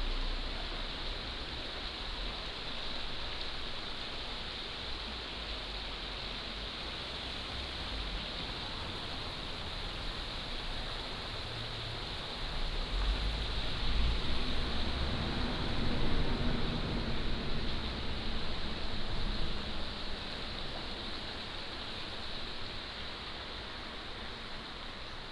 埔里鎮桃米里, Nantou County - Below the viaduct
Below the viaduct, The sound of water streams, Traffic Sound, Bird calls
Nantou County, Taiwan, 30 April